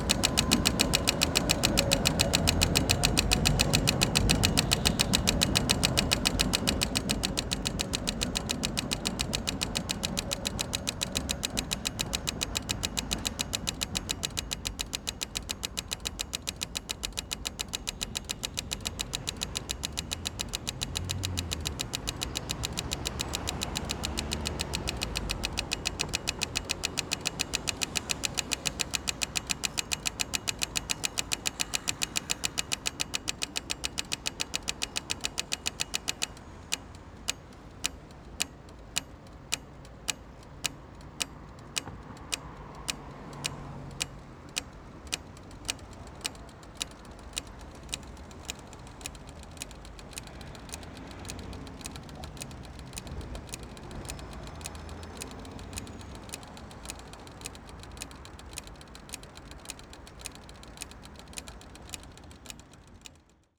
Nové sady, Brno-Brno-střed, Česko - Traffic lights
Recorded on Zoom H4n + Rode NTG, 26.10.2015.